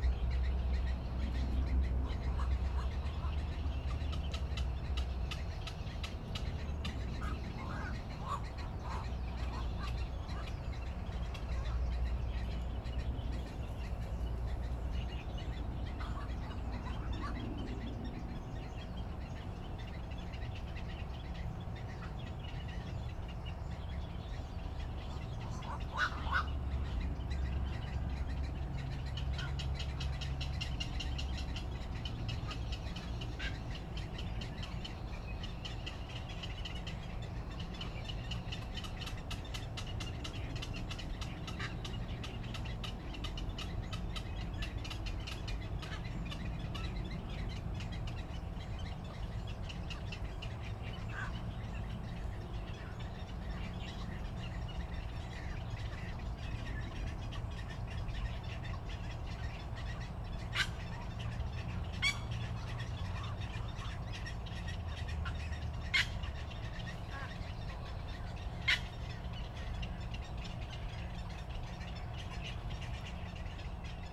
{"title": "羅東林業文化園區, Luodong Township - Birdsong", "date": "2014-07-28 09:51:00", "description": "Birdsong, Trains traveling through, Traffic Sound\nZoom H6 MS+ Rode NT4", "latitude": "24.68", "longitude": "121.77", "altitude": "8", "timezone": "Asia/Taipei"}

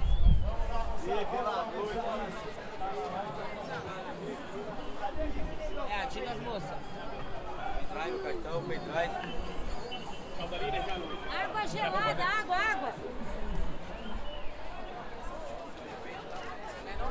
{"title": "Rua 25 de Março - Centro, São Paulo - SP, 01021-200, Brasil - 25 de Março", "date": "2019-04-13 15:00:00", "description": "Gravação realizada na rua 25 de Março, maior centro comercial da América Latina.", "latitude": "-23.54", "longitude": "-46.63", "altitude": "750", "timezone": "GMT+1"}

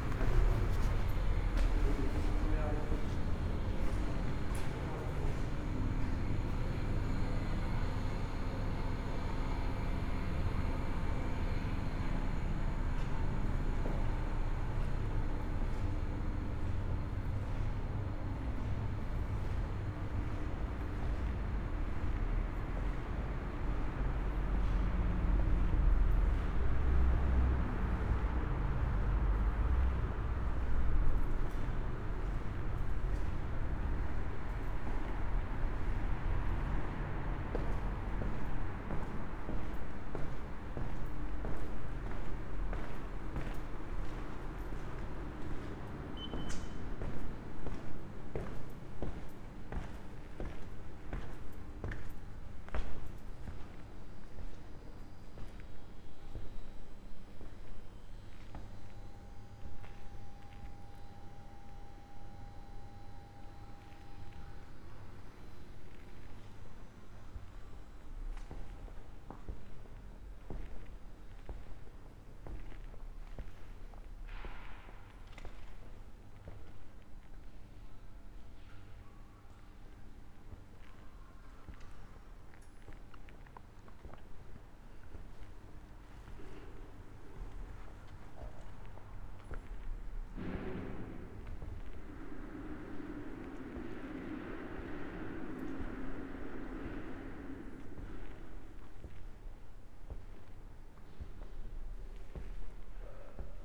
{"title": "berlin: u-bahnhof schönleinstraße - empty station ambience", "date": "2020-04-05 22:55:00", "description": "Berlin Schönleistr. U8 subway station, Sunday night, empty, a homeless person, city workers cleaning the station, train arrives at station. covid-19 wiped out most of the passengers in public transport these days\n(Sony PCM D50, Primo EM172)", "latitude": "52.49", "longitude": "13.42", "altitude": "42", "timezone": "Europe/Berlin"}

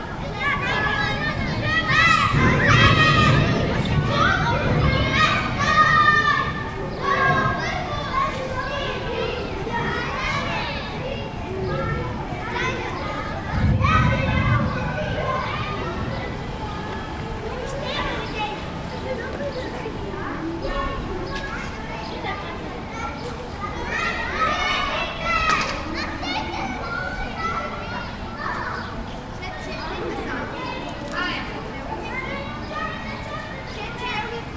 {"title": "Nova Gorica, Slovenija, Ledinska Šola - Grom Na Igrišču", "date": "2017-06-09 11:00:00", "latitude": "45.96", "longitude": "13.64", "altitude": "92", "timezone": "Europe/Ljubljana"}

